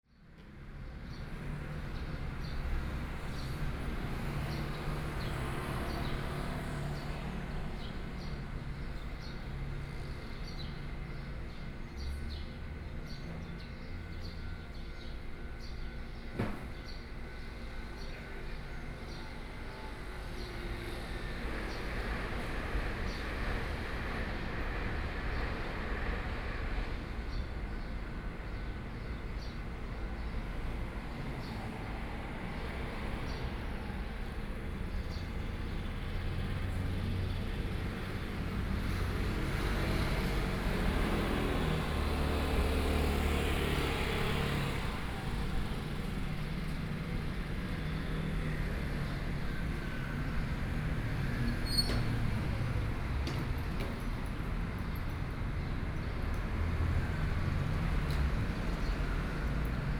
Sec., Zhongshan Rd., 礁溪鄉大義村 - Town
Traffic Sound, In the morning
Zoom H6 XY mic+ Rode NT4